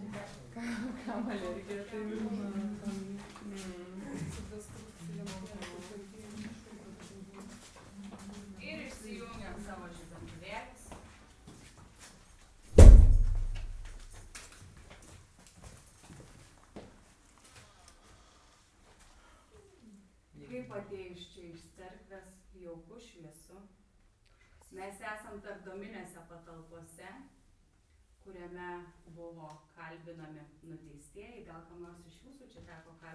Vilnius, Lithuania, night excusion in Lukiskiai prison
Night walk in recently closed Lukiskiai prison. Interrogation/interview room. Recorded with Sennheiser ambeo headset.